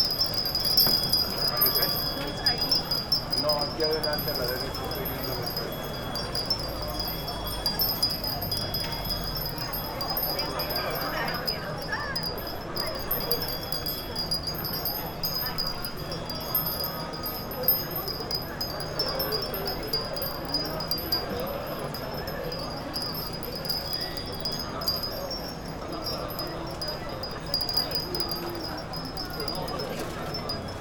chome asakusa, tokyo - wind bells
Tokyo, Japan